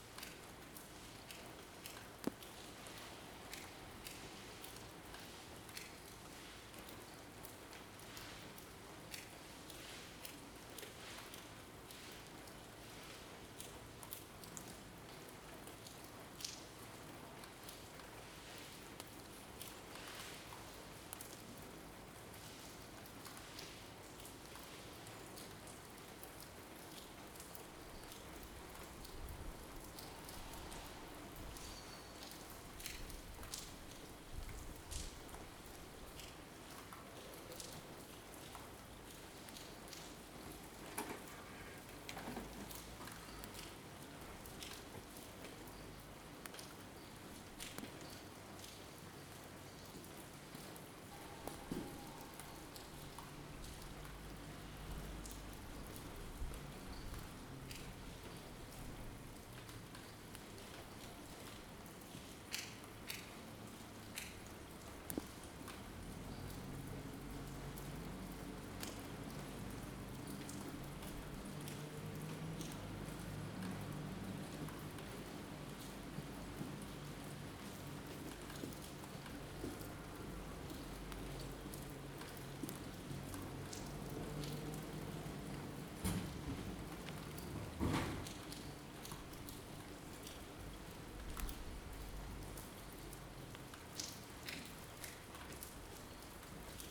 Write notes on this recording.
Rain field recording made in the morning during the COVID-19 lockdown. Recorded using a Zoom H2. Raw field recording, no edition.